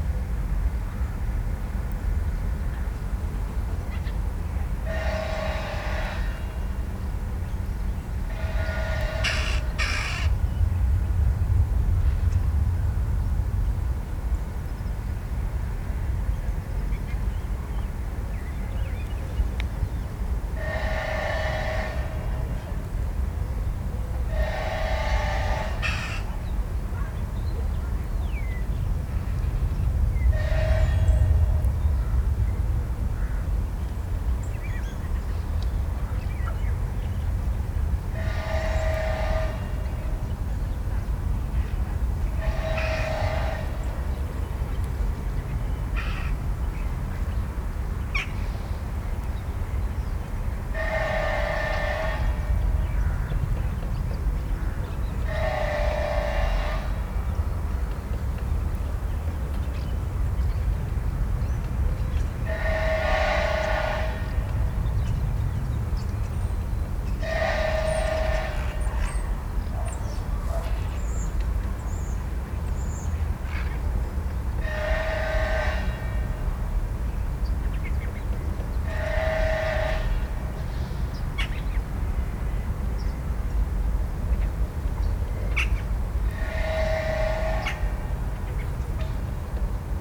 Warta river embankment, Srem - saw snarls
recorded by the river, bit outside of the city. someone working with a saw or a grinder on the other side of the river. distant traffic from the bridge (Roland r-07 internal mics)